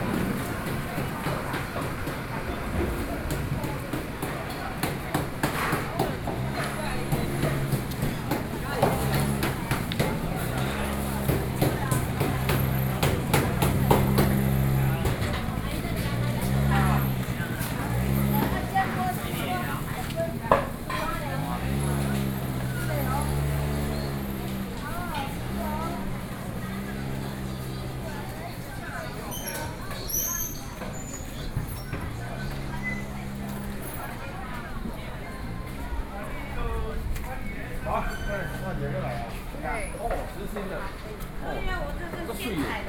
臨沂街, Taipei City - Traditional markets
Zhongzheng District, Taipei City, Taiwan, 3 November 2012, ~08:00